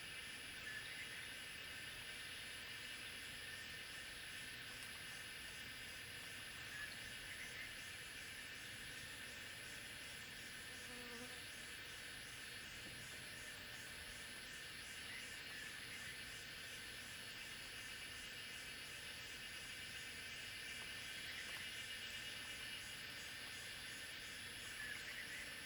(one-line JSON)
{"title": "草楠濕地, 埔里鎮桃米里, Taiwan - Cicada and birds sounds", "date": "2016-06-07 12:13:00", "description": "Bird sounds, Cicada sounds\nZoom H2n MS+XY", "latitude": "23.95", "longitude": "120.91", "altitude": "591", "timezone": "Asia/Taipei"}